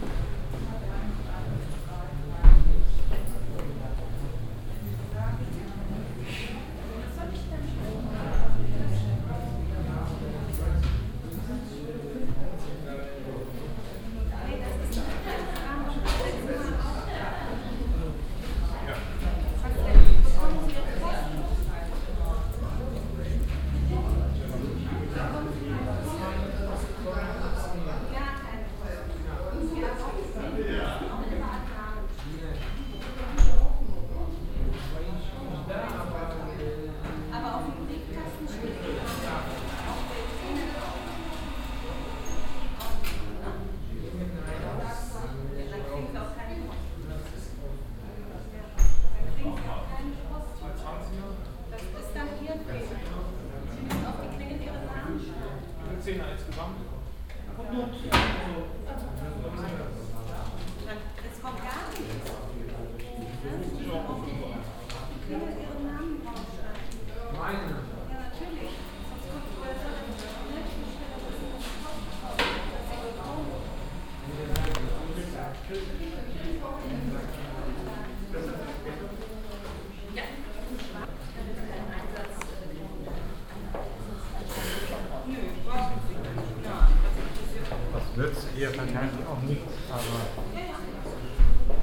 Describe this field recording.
people standing in a row waiting at local post office in the early afternoon, soundmap nrw social ambiences/ listen to the people - in & outdoor nearfield recordings